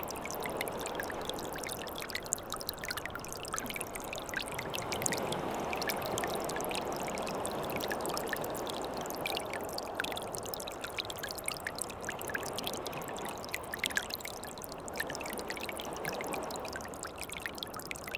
During the low tide, theres a lot of small streams, going to the sea. Very quiet ambiance on the Bois de Cise beach.